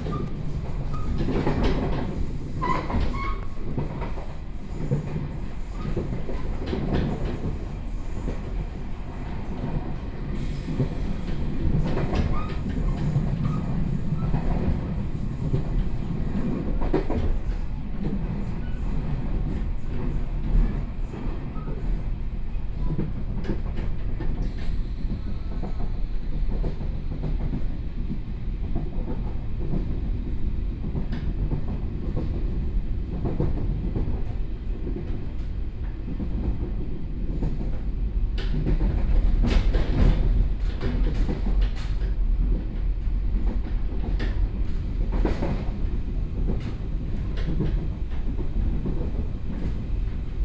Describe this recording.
Tze-Chiang Train, from Shulin Station to Banqiao Station, Zoom H4n+ Soundman OKM II